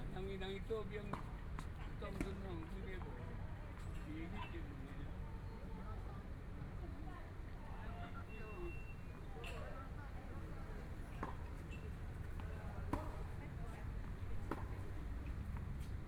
內湖區湖濱里, Taipei City - Tennis
Sitting next to tennis courts, in the Park, Distant school students are practicing traditional musical instruments, Aircraft flying through, Traffic Sound, Construction noise
Binaural recordings, Sony PCM D100 + Soundman OKM II
Taipei City, Taiwan, 27 February, ~15:00